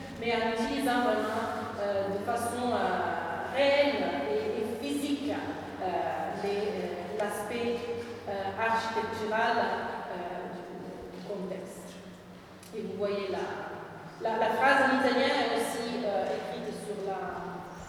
{
  "title": "Castello di Rivoli, via Carlo Emanuele II, Rivoli TO, Italy - Reverb in the Castello di Rivoli",
  "date": "2015-03-18 13:36:00",
  "latitude": "45.07",
  "longitude": "7.51",
  "altitude": "424",
  "timezone": "Europe/Rome"
}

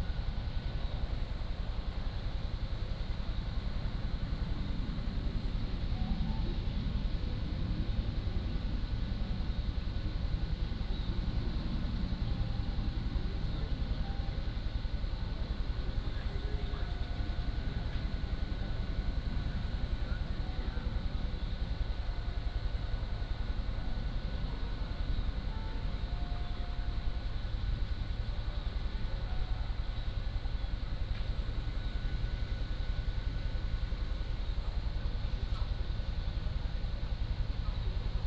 {
  "title": "臺東航空站 (TTT), Taitung County - In the square",
  "date": "2014-10-06 10:33:00",
  "description": "In the square outside the airport",
  "latitude": "22.76",
  "longitude": "121.11",
  "altitude": "39",
  "timezone": "Asia/Taipei"
}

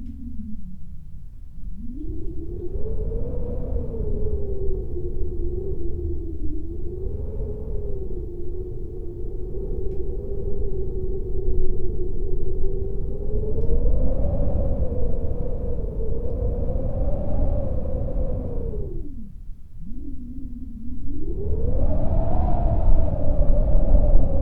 {
  "title": "Windy Hill district, wind in an air vent",
  "date": "2011-08-26 17:52:00",
  "latitude": "52.44",
  "longitude": "16.94",
  "altitude": "92",
  "timezone": "Europe/Warsaw"
}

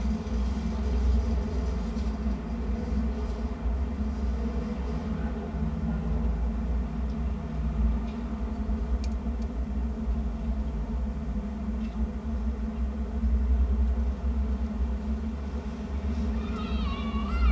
{
  "title": "traffic noise recorded inside a gully, Fürther Str., Nürnberg/Muggenhof",
  "date": "2011-04-13 15:38:00",
  "latitude": "49.46",
  "longitude": "11.04",
  "altitude": "304",
  "timezone": "Europe/Berlin"
}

